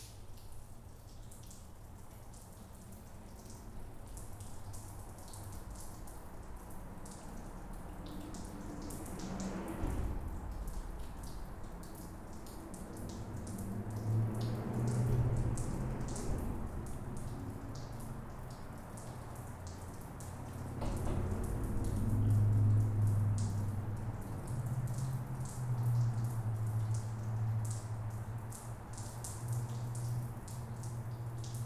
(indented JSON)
{
  "title": "Park Road - Under the Bridge",
  "date": "2022-01-23 13:00:00",
  "description": "Recorded with a zoom h4n",
  "latitude": "41.67",
  "longitude": "-91.53",
  "altitude": "195",
  "timezone": "America/Chicago"
}